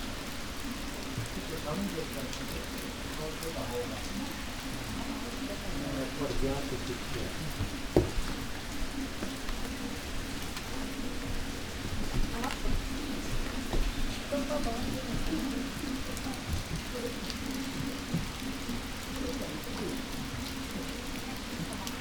{"title": "garden, veranda, Nanzenji, Kyoto - rain", "date": "2014-11-02 11:58:00", "latitude": "35.01", "longitude": "135.79", "altitude": "90", "timezone": "Asia/Tokyo"}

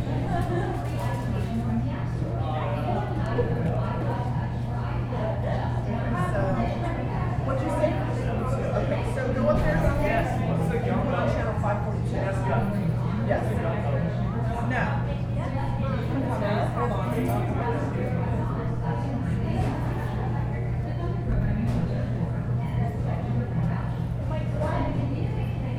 {"title": "neoscenes: waiting for Chris and Gary", "date": "2011-09-23 11:15:00", "latitude": "40.14", "longitude": "-105.13", "altitude": "1529", "timezone": "America/Denver"}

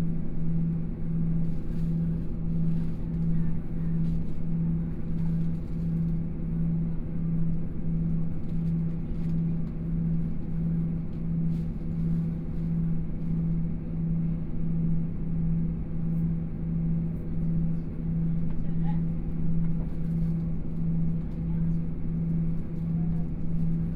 {"title": "Luye Township, Taitung County - Tze-Chiang Limited Express", "date": "2014-01-15 14:04:00", "description": "from Ruiyuan Station to Luye Station, the sound of message broadcasting, Train noise, Binaural recordings, Zoom H4n+ Soundman OKM II", "latitude": "22.93", "longitude": "121.15", "timezone": "Asia/Taipei"}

